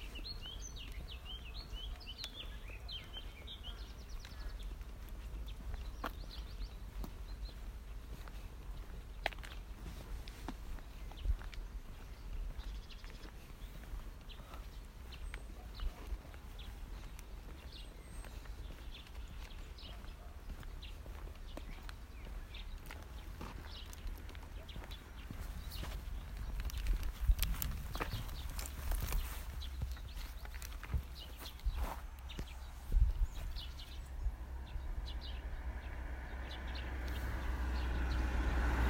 I was on a walk with my 10 months old nephew, trying to make him fall asleep. I use to walk him there quite often and even now, when the spring is in bloom, the walk was full of sounds - birds, bees, horses by the side, water and sometime you can hear my nephew blabbing. Wish you would be there with me!